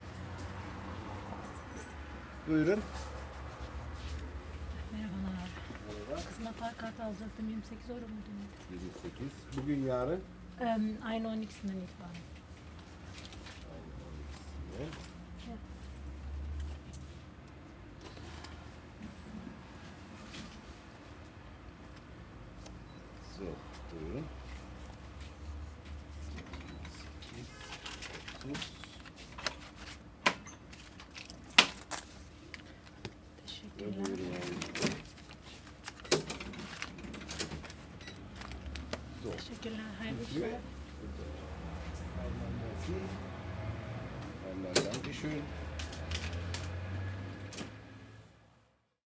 Prinzenallee, Soldiner Kiez, Wedding, Berlin, Deutschland - Prinzenallee 38, Berlin - Inside the corner shop
Prinzenallee 38, Kundin im Zigarettenladen.